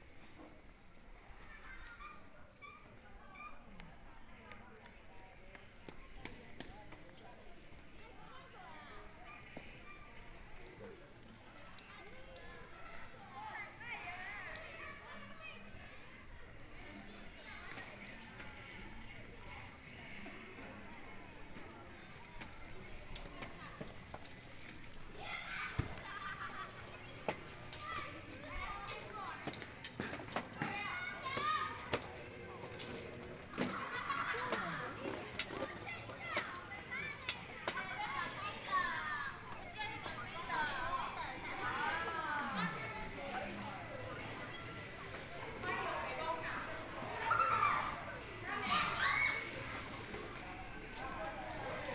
Shuntian temple, Yunlin - Traditional New Year
The plaza in front of the temple, Very many children are playing games, Zoom H4n+ Soundman OKM II